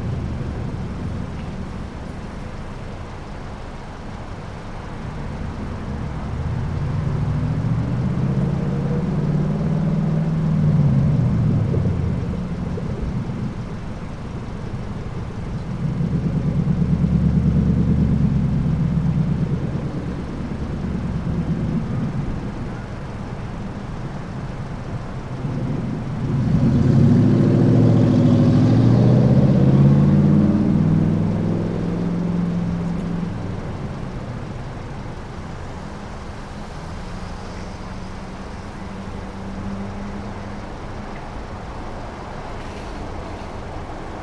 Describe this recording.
The parking lot of a Publix supermarket. Most of the sounds heard are traffic-related, although there are some other sounds as well.